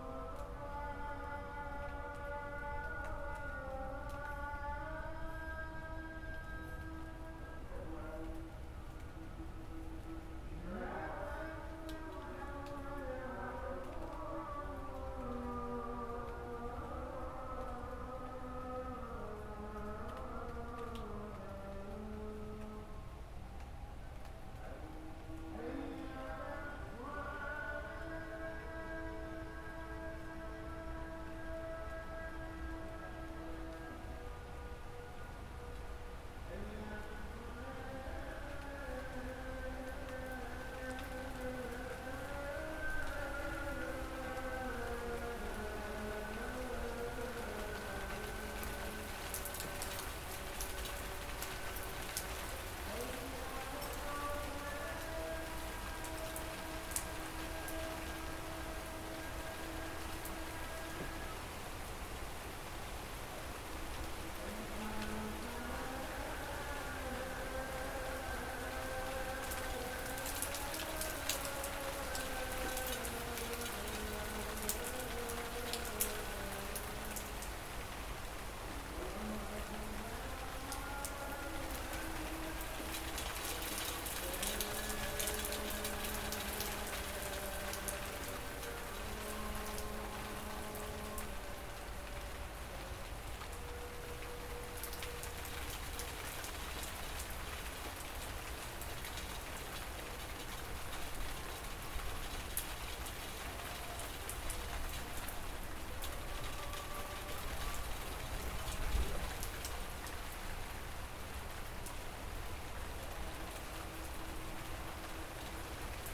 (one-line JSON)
{"title": "Jl. DR. Setiabudhi, Isola, Sukasari, Kota Bandung, Jawa Barat, Indonesia - Indonesian prayer ambience and rain", "date": "2018-11-22 13:14:00", "description": "Recorded with Roland R09 just inside patio doors: afternoon prayer chants are heard after a rain shower, rain resumes, there is some thunder, the prayer chants resume in the rain, and some ambience of the city can be heard.", "latitude": "-6.85", "longitude": "107.59", "altitude": "965", "timezone": "Asia/Jakarta"}